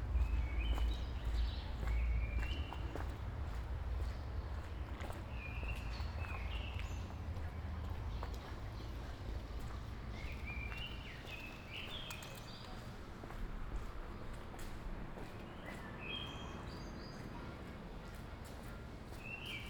Ascolto il tuo cuore, città. I listen to your heart, city. **Several chapters SCROLL DOWN for all recordings ** - Evening walking without rain in the time of COVID19 Soundwalk
"Evening walking without rain in the time of COVID19" Soundwalk
Chapter LII of Ascolto il tuo cuore, città. I listen to your heart, city
Tuesday April 21th 2020. San Salvario district Turin, walking to Corso Vittorio Emanuele II and back, forty two days after emergency disposition due to the epidemic of COVID19.
Start at 7:22 p.m. end at 4:43 p.m. duration of recording 28’00”
The entire path is associated with a synchronized GPS track recorded in the (kmz, kml, gpx) files downloadable here:
Piemonte, Italia, 21 April 2020, 19:22